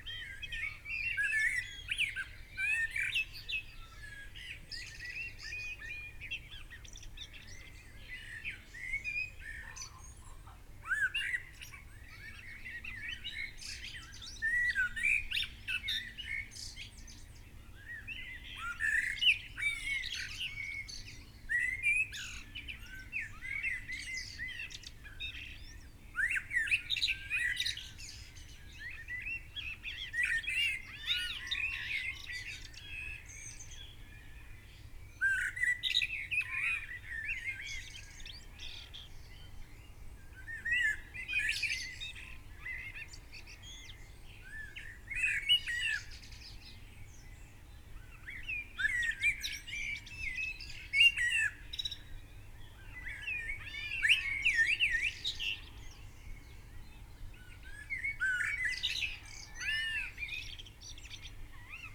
Unnamed Road, Malton, UK - blackbird song ... left ... right ... and centre ...
blackbird song ... left ... right ... and centre ... lavalier mics clipped to a bag ... placed in the crook of a tree ... bird call ... pheasant ...
10 April 2019, ~06:00